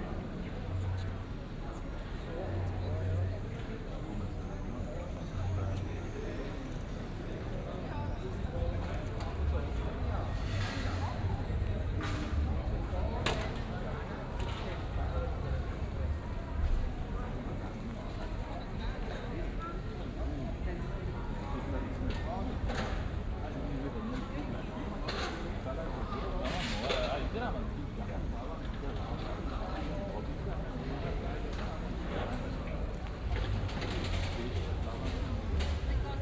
Aarau, Rathausgasse, Schweiz - walkrathausgasse
Walk from the Rathaus to the record shop, afternoon, the restaurants and bars prepare for the party at the evening, cars, no busses.
Aarau, Switzerland, 30 June 2016, 13:10